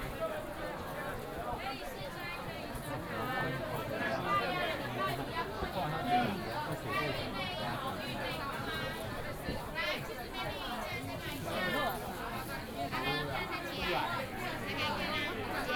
Walking in the traditional market, Walking in the alley
Taichung City, Taiwan, 22 January 2017, 11:54